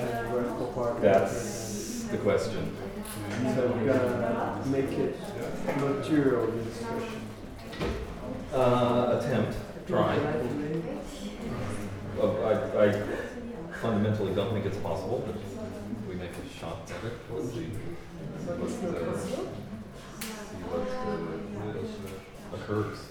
neoscenes: end of workshop at Z33